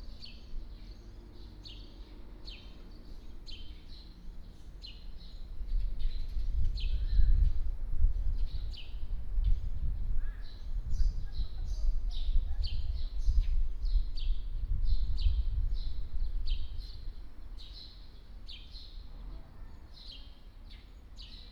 勝安宮, 五結鄉錦眾村 - In the temple plaza
In the temple plaza, Traffic Sound, Hot weather, Birds
29 July 2014, 11:30